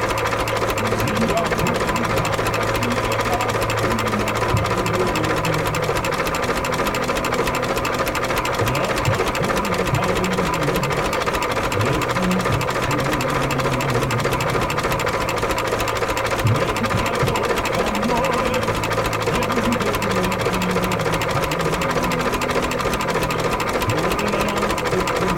Dorfstraße, Rheinsberg, Deutschland - Treckertreffen Zechow 2022
Treckertreffen Zechow 2022